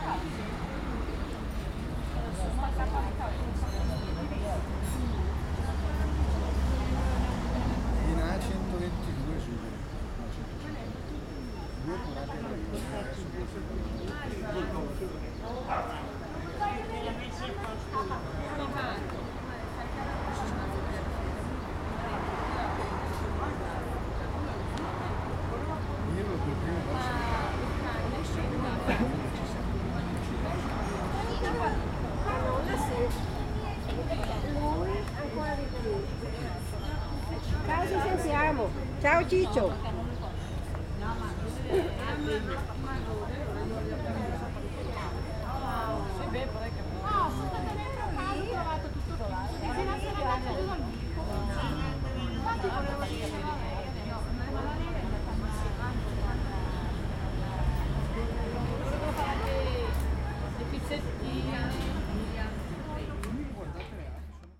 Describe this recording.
Strasenbar in Tirano Italien an der Flaniermeile